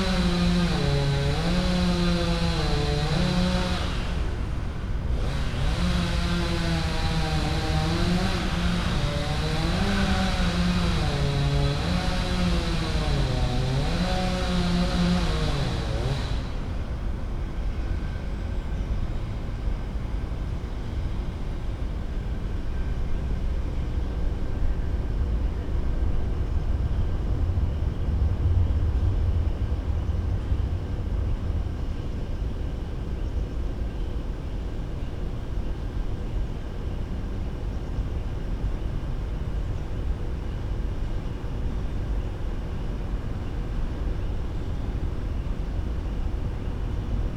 Poznan, Park Solacki - wood works
man working a power saw, cutting a fallen tree into logs. (roland r-07)
wielkopolskie, Polska, 22 August, 08:18